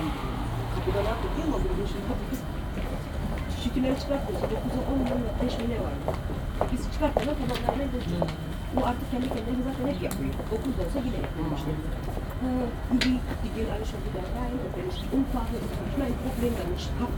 kottbusser damm: selbstbedienungsbäckerei - back factory: outside area
Berlin, Germany, 19 March 2009